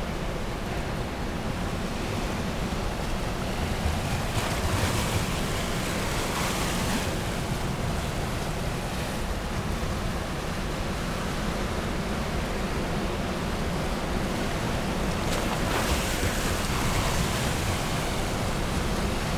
East Lighthouse, Battery Parade, Whitby, UK - east pier ... outgoing tide ...
east pier ... out going tide ... lavalier mics clipped to T bar on fishing landing net pole ... placed over edge of pier ... calls from herring gulls ...